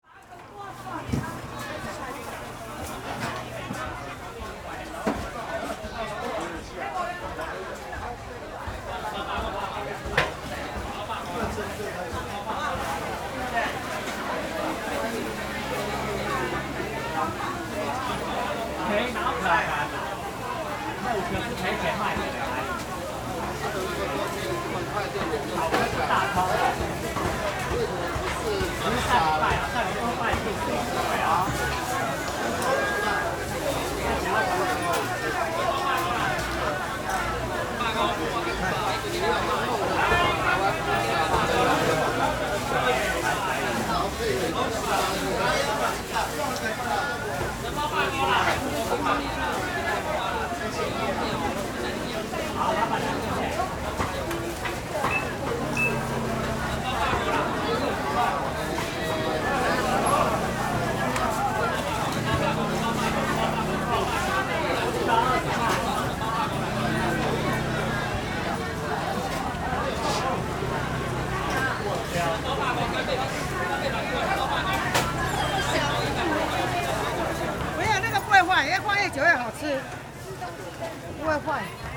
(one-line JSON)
{"title": "Ln., Minxiang St., Zhonghe Dist., New Taipei City - In the Evening market", "date": "2012-01-21 18:05:00", "description": "In the Evening market\nZoom H4n", "latitude": "24.99", "longitude": "121.52", "altitude": "9", "timezone": "Asia/Taipei"}